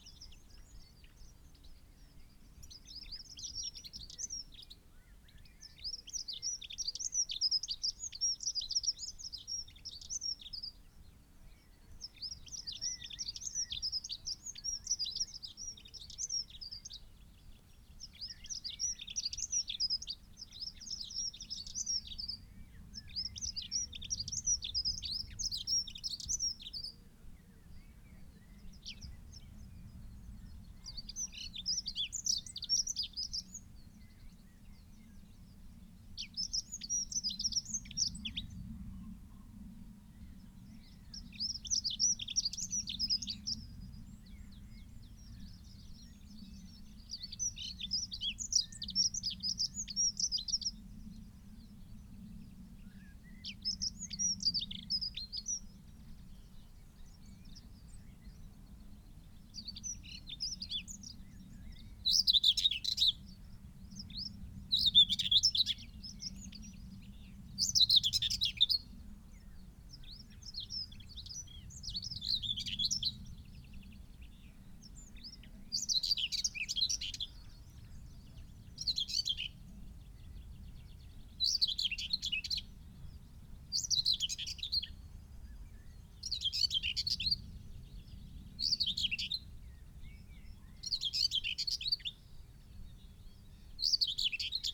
Malton, UK - whitethroat song soundscape ...
whitethroat song soundscape ... dpa 4060s clipped to bag to zoom h5 ... bird calls ... song from ... chaffinch ... linnet ... blackbird ... dunnock ... skylark ... pheasant ... yellowhammer ... whitethroat flight song ... bird often visits song posts at distance ...
England, United Kingdom, 29 May 2022, 05:15